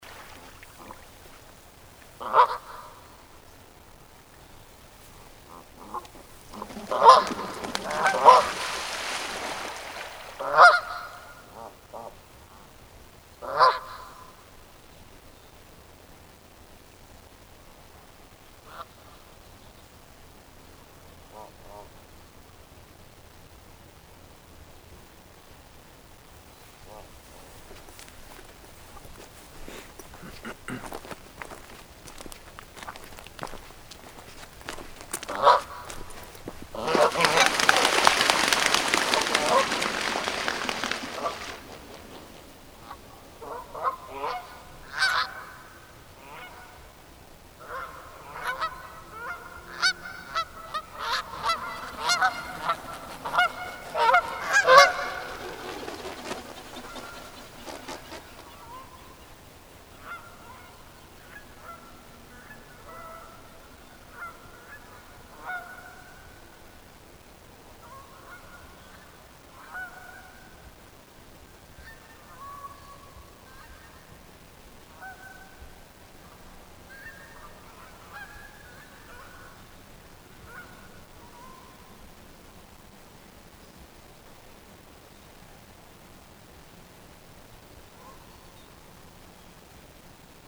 envole doie sauvage, chateau de dampiere
enregistré lors du tournage Louis XVI la fuite à varennes darnaud selignac france 2
Dampierre-en-Yvelines, France